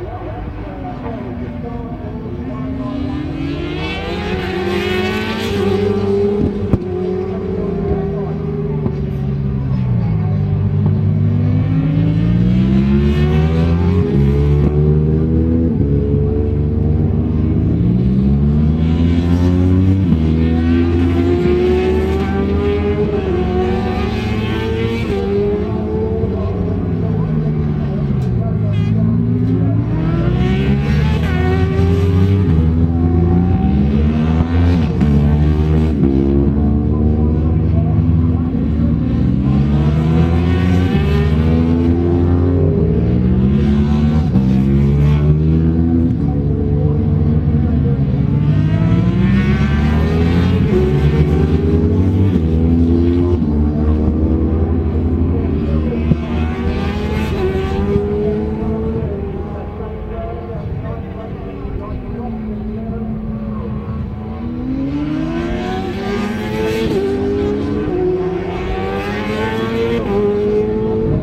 Unit 3 Within Snetterton Circuit, W Harling Rd, Norwich, United Kingdom - BSB 2001 ... Superbikes ... warm-up ...
BSB 2001 ... Superbikes ... warm up ... one point stereo mic to minidisk ... commentary ... sort of ...